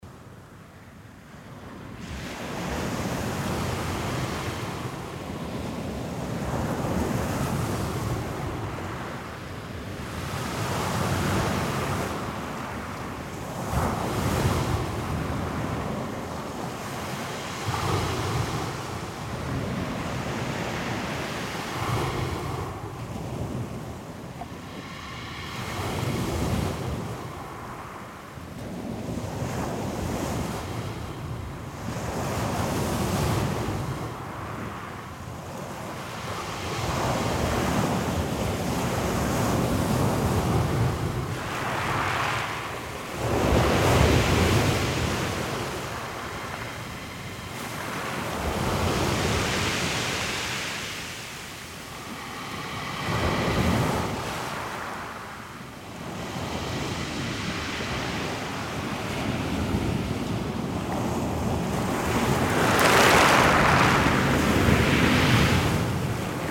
Orford Ness National Trust nature reserve, Suffolk. - Orford Ness Lighthouse shingle beach
Waves on shingle beach infront of Orford Ness Lighthouse. DPA 4060 pair (30cm spacing) / Sound Devices 702
Woodbridge, Suffolk, UK, 31 January 2016